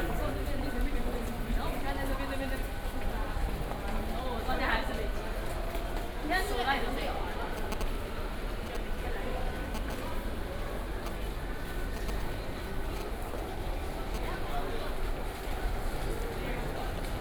{
  "title": "Taipei Main Station, Taiwan - Soundwalk",
  "date": "2013-05-01 18:12:00",
  "description": "walking into the Taipei Main Station, Sony PCM D50 + Soundman OKM II",
  "latitude": "25.05",
  "longitude": "121.52",
  "altitude": "22",
  "timezone": "Asia/Taipei"
}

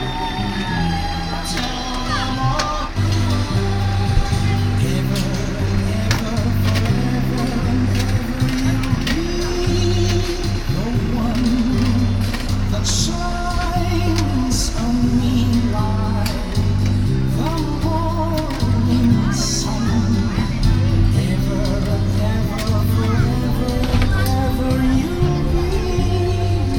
{
  "title": "audresseles, markttag, musiken",
  "description": "mittags auf dem markt, stimmen von passanten, plötzliches einsetzen der beschallungsanlage eines musikstandes - musik cuts original\nfieldrecordings international:\nsocial ambiences, topographic fieldrecordings",
  "latitude": "50.82",
  "longitude": "1.59",
  "altitude": "12",
  "timezone": "GMT+1"
}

